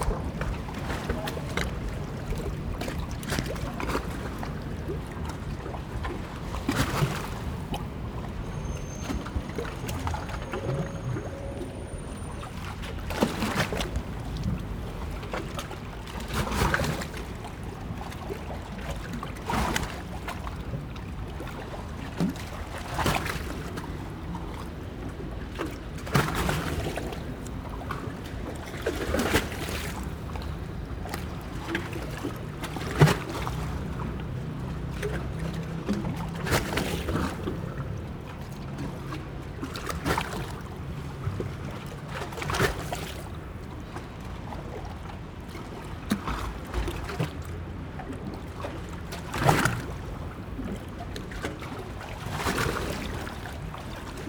Hightide waves slopping against the wall, Queen Street Place, London, UK - Hightide waves slopping against the embankment
The Thames is a fast flowing river and tides rise and fall surprisingly quickly. At this point you are extremely close to the water and can feel strength of the current and its powerful flow.